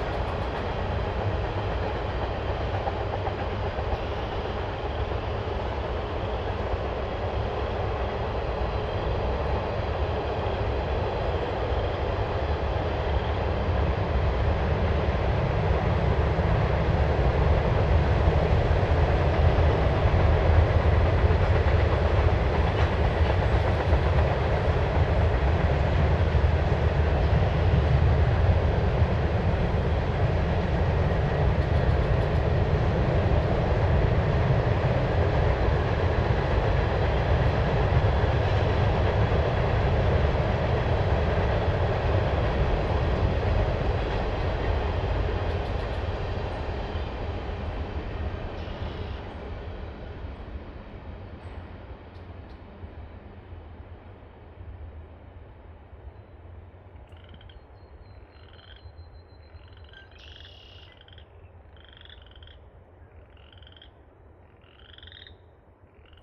Walkerton, IN, USA - Passing train quieting a frog chorus, Walkerton, IN, USA
Recorded on a Zoom H4 Recorder